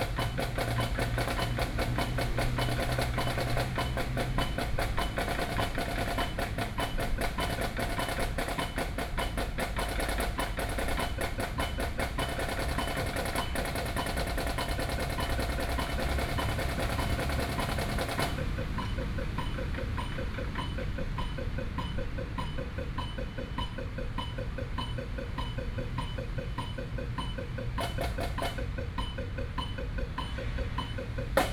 National Chiang Kai-shek Memorial Hall, Taipei - percussion instrument
Students are practicing percussion instrument, Sony PCM D50 + Soundman OKM II
台北市 (Taipei City), 中華民國, 3 May, 20:16